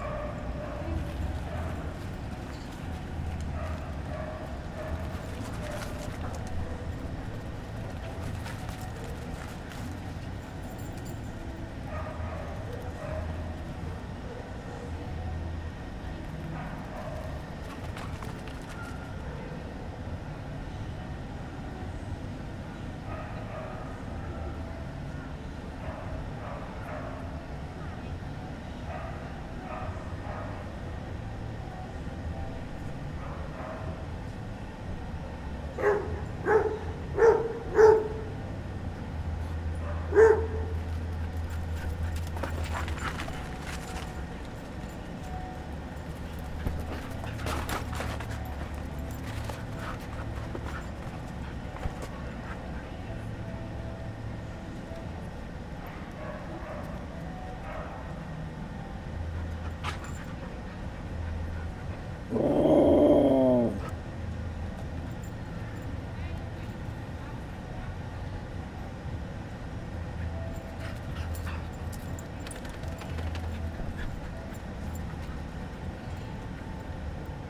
Cra., Medellín, Belén, Medellín, Antioquia, Colombia - Parque Perros
Se escuchan murmullos de personas, hay diferentes sonidos de perros, a lo lejos y de cerca, se escuchan tambien cadenas y el trotar de los animales, también se escucha el ruido de un motor y del aire. Se siente tambien una música a lo lejos. Tambien se escucha un avión a lo lejos.
Valle de Aburrá, Antioquia, Colombia, 1 September 2022, ~17:00